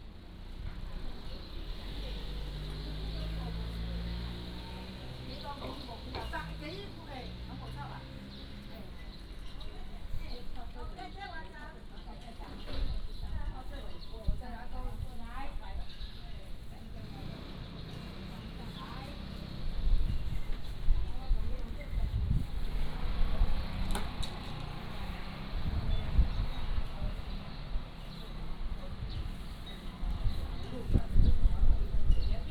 案山里, Magong City - In the temple square
In the temple square, Wind, Birds singing